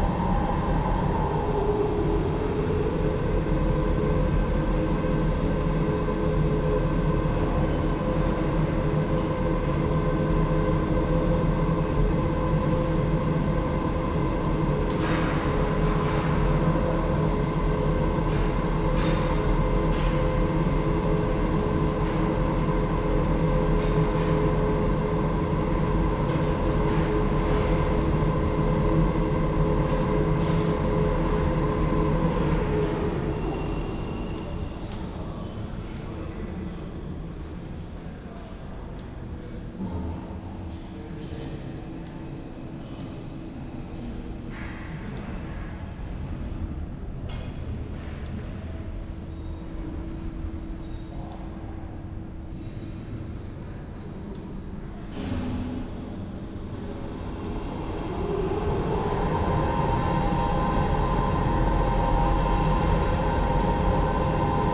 Schloßberg, Graz, Austria - elevator at work
ambience within Schlossberg hill, at the elevator station
(PCM D-50, DPA4060)